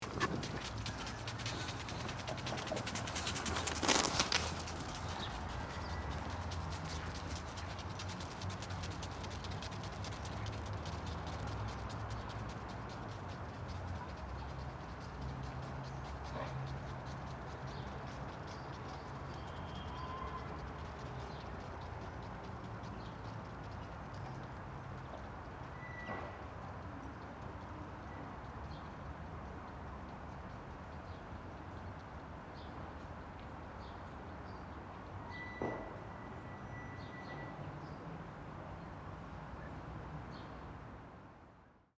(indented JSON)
{
  "title": "Rijeka, Croatia, Natural History Museum - Natural History Museum 01",
  "date": "2013-04-01 17:05:00",
  "latitude": "45.33",
  "longitude": "14.44",
  "altitude": "35",
  "timezone": "Europe/Zagreb"
}